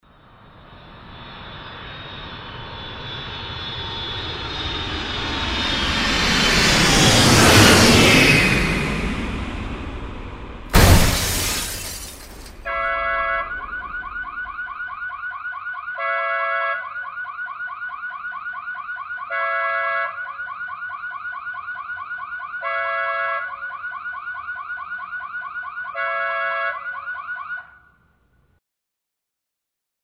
handys keep falling on my head
Cologne, Germany